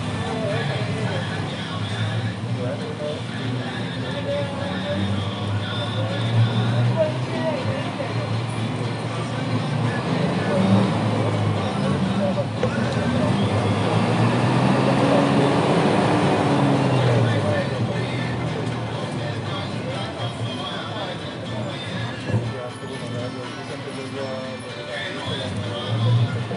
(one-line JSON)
{"title": "Cra., Itagüi, Antioquia, Colombia - Bus integrado Calatrava", "date": "2021-11-09 06:30:00", "description": "Bus integrado metro Calatrava\nSonido tónico: Música, avisos publicitarios, conversaciones, motor\nSeñal sonora: Motos\nTatiana Flórez Ríos - Tatiana Martínez Ospino - Vanessa Zapata Zapata", "latitude": "6.18", "longitude": "-75.59", "altitude": "1536", "timezone": "America/Bogota"}